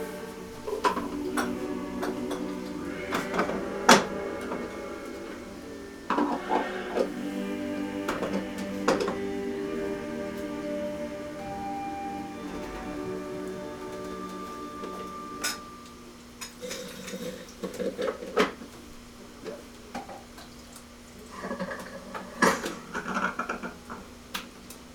tea cafe house, Kokedera, Kyoto, Japan - again, rivers